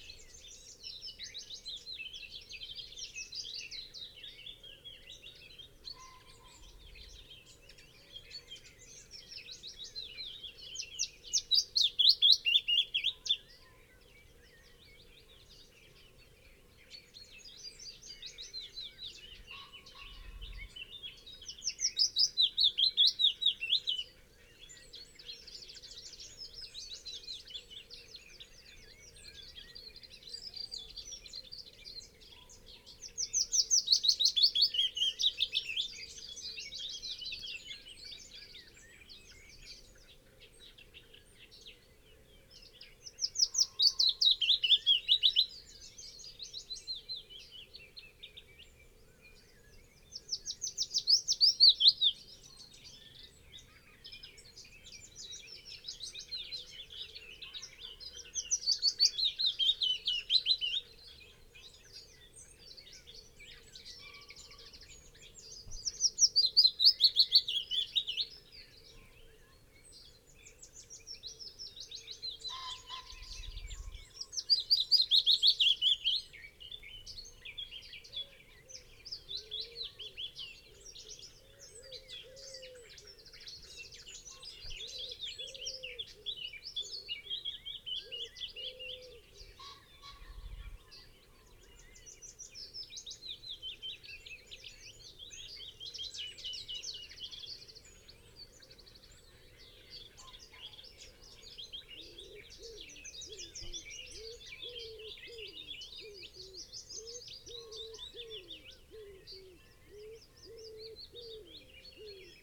Green Ln, Malton, UK - dawn chorus in the pit ... sort of ...
dawn chorus in the pit ... sort of ... lavalier mics clipped to twigs ... bird call ... song ... from ... buzzard ... tawny owl ... chaffinch ... wren ... dunnock ... willow warbler ... pheasant ... red-legged partridge ... wood pigeon ... blackcap ... blue tit ... great tit ... yellowhammer ... linnet ... greylag goose ... crow ... fieldfare ... distant roe deer can be heard 13:30 + ...